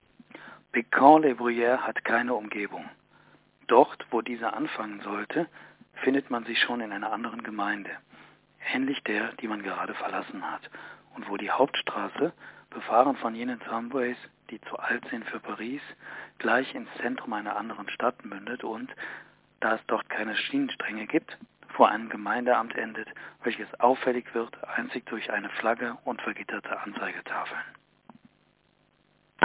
Bécon-les-Bruyères - Bécon-les-Bruyères, Emmanuel Bove 1927